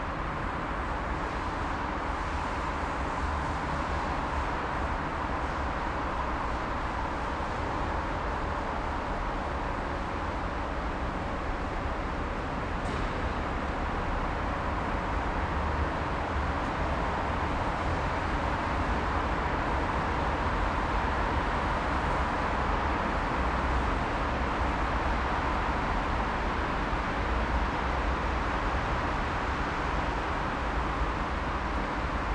Inside the old Ice Stadium of Duesseldorf. The hall is empty and you hear the sound of the the street traffic from the nearby street and water streams as some workers clean the walk ways with a hose pipe.
This recording is part of the exhibition project - sonic states
soundmap nrw -topographic field recordings, social ambiences and art places